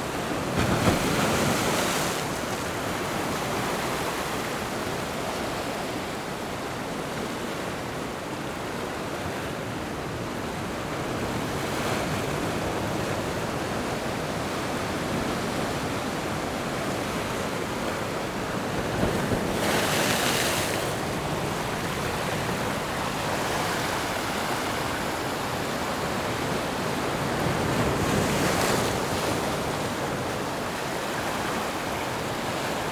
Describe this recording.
Sound of the waves, On the coast, Zoom H6 MS mic + Rode NT4